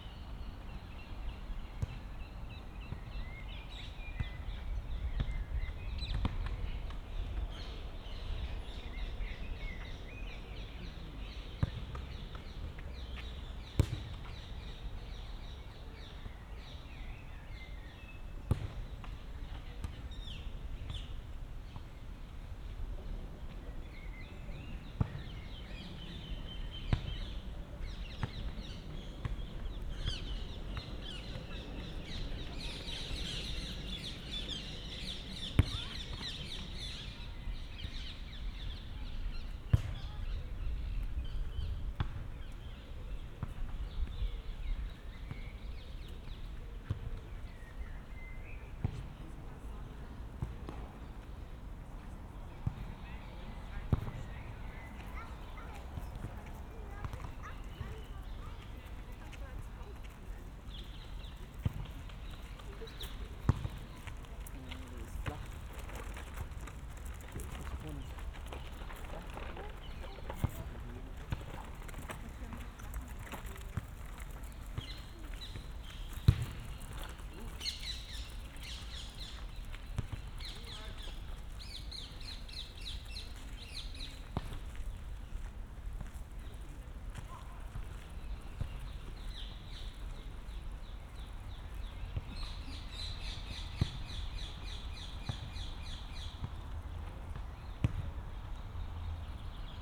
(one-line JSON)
{"title": "Rheinpromenade, Mannheim, Deutschland - Sportplatz Schnickenloch", "date": "2022-05-30 19:07:00", "description": "Sportplatz, Aufwärmen beim Fußball, Jogger dreht Runden, zwei junge Menschen üben Cricket, Vögel, Abendsonne, junge Familie läuft hinter mir vorbei, Wind, Urban", "latitude": "49.48", "longitude": "8.46", "altitude": "97", "timezone": "Europe/Berlin"}